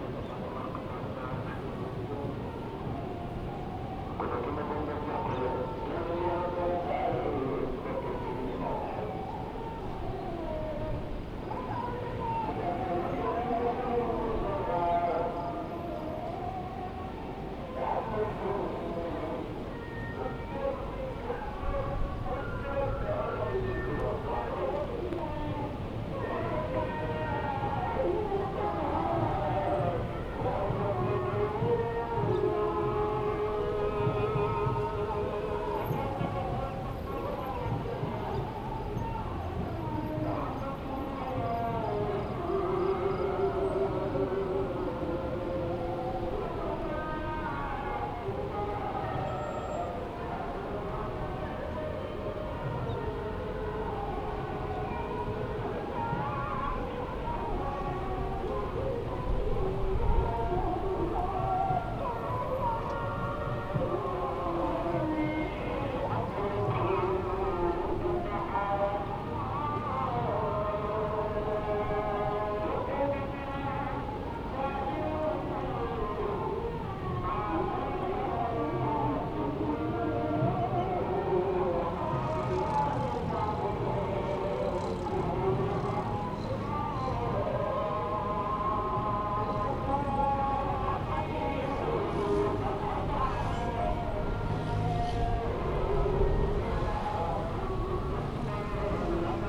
Nord, Saint Louis, Senegal - Fisherman's Village
Standing at the corner of the island facing the archipelago and the Fisherman's Village, this recording was taken at midnight. There was a huge crescent moon overhead. There were dozens of sleeping dogs lying all around me in the sand. There was a breeze coming off the ocean. In the recording you can hear all of the prayers from different mosques on the archipelago. Recorded with a Zoom H4.